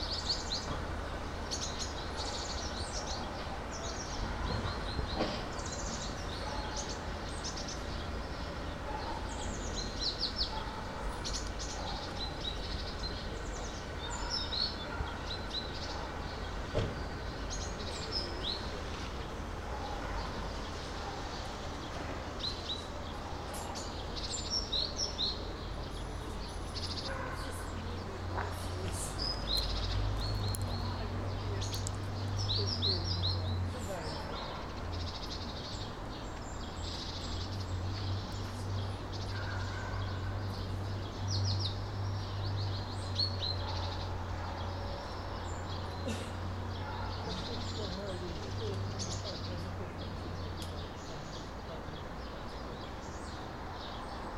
ул. Трудовая, дом, г, Костянтинівка, Донецька область, Украина - Мужская перебранка, детские голоса и звуки машин
Звук: Zoom H2n
Донецька область, Ukraine, 28 October 2018, ~11:00